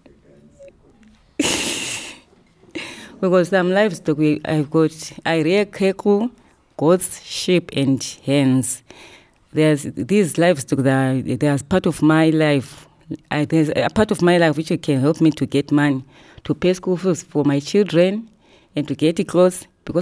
Tusimpe Pastoral Centre, Binga, Zimbabwe - where i come from...
….you are joining us during a workshop for audio documentation with the women of Zubo Trust in a round hut-shaped conference room at Tusimpe… quite at the beginning we explored the power of detailed description; how can we take our listeners with us to a place they might not know… here Lucia Munenge, Zubo’s community-based facilitator for Sikalenge gives it a try in her first recording...